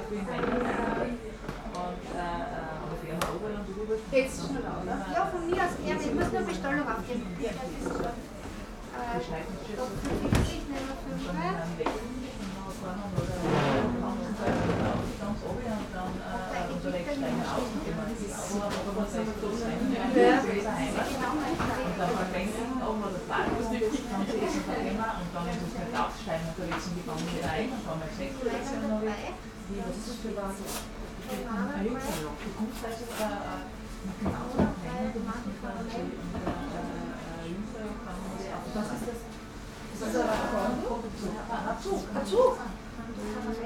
Sackstraße, Graz - cafe, konditorai König, Graz